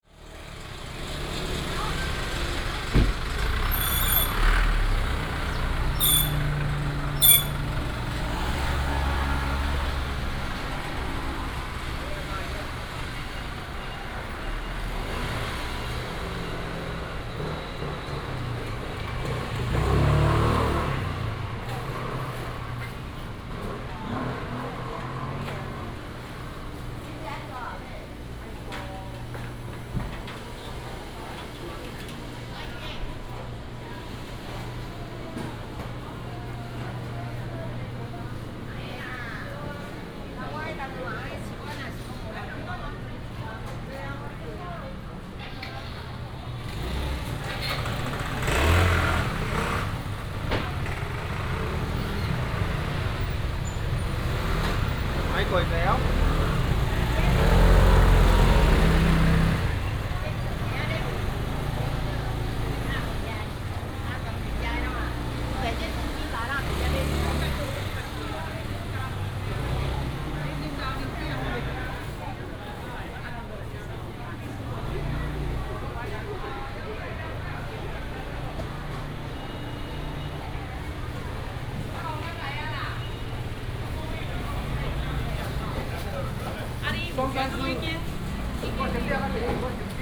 東興市場, Taichung City - Walking through the market
Walking through the market, Traffic sound, motorcycle
22 March 2017, 10:44